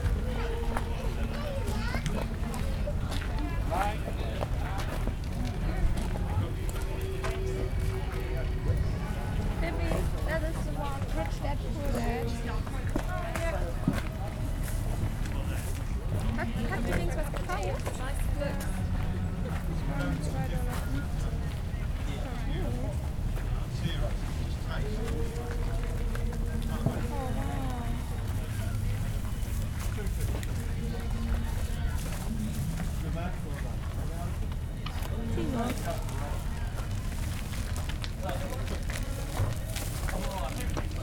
Riccarton Market, Christchurch, New Zealand - Sounds of the market
Zoom H4n, internal mics. Sound next to book stalls.